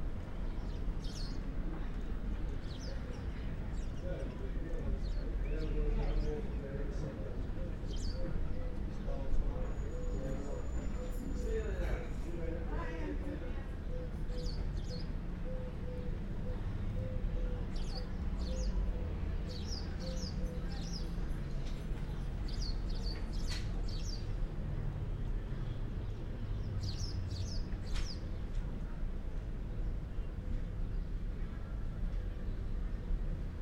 Mogan, Gran Canaria, soundscape from a roof
Las Palmas, Spain, 28 January 2017